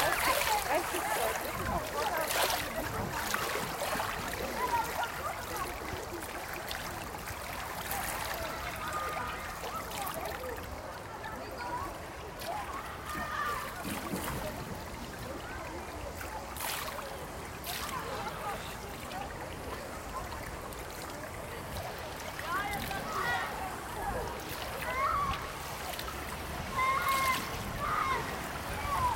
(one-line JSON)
{"title": "lippstadt, public swimming pool", "description": "walking across the area. water, children etc.\nrecorded june 23rd, 2008.\nproject: \"hasenbrot - a private sound diary\"", "latitude": "51.67", "longitude": "8.33", "altitude": "75", "timezone": "GMT+1"}